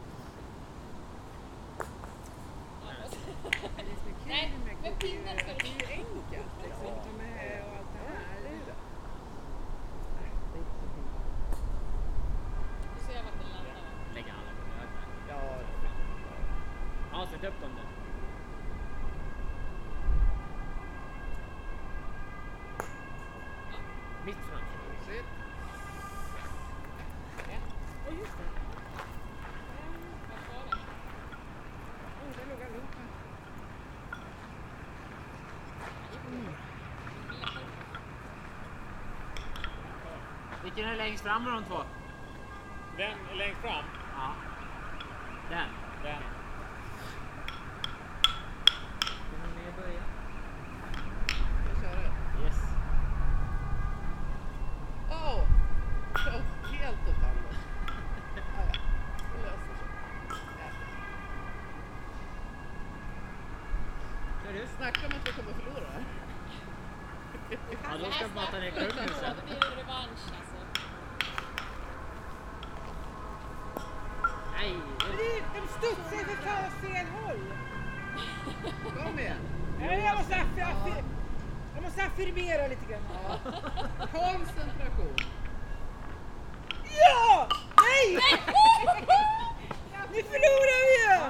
Kungsholmen, Stockholm, Suecia - Playing Kubb
Joves jugant al joc de bitlles Kubb.
People playing Kubb.
Gente jugando al Kubb
Stockholm, Sweden, August 2016